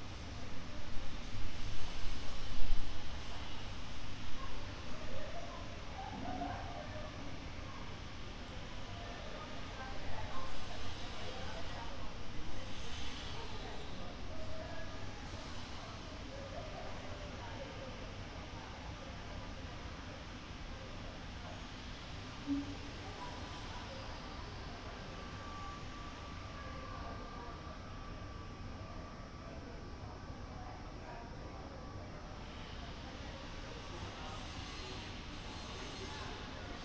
Ascolto il tuo cuore, città, I listen to your heart, city. Several chapters **SCROLL DOWN FOR ALL RECORDINGS** - "Sunny January Sunday with students voices in the time of COVID19": Soundscape
"Sunny January Sunday with students voices in the time of COVID19": Soundscape
Chapter CLXXXVI of Ascolto il tuo cuore, città, I listen to your heart, city.
Sunday, January 30th, 2022. Fixed position on an internal terrace at San Salvario district Turin.
Start at 1:45 p.m. end at 2:21 p.m. duration of recording 35:56.